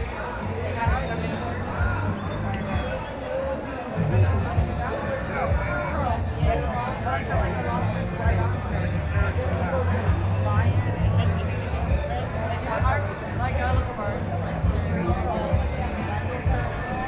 neoscenes: in front of Matts Saloon
1 July, 21:58